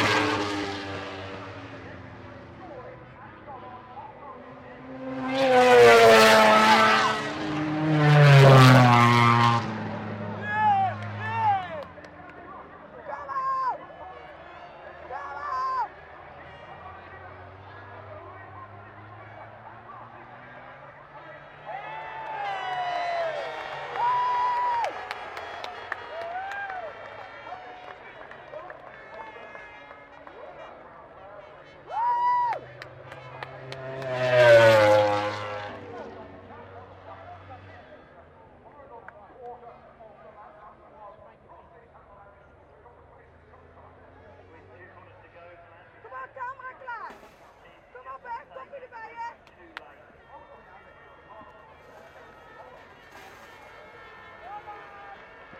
British Motorcycle Grand Prix 2006 ... MotoGP race ... one point stereo mic to mini-disk ...
East Midlands, England, United Kingdom, 2 July 2006